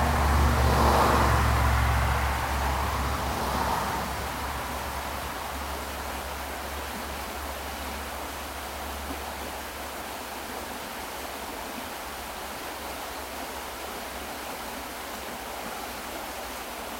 near ruppichteroth, bridge over river bröl
near: river Bröl. Background: cars passing on valley road.
recorded june 25th, 2008, around 10 p. m.
project: "hasenbrot - a private sound diary"
Ruppichteroth, Germany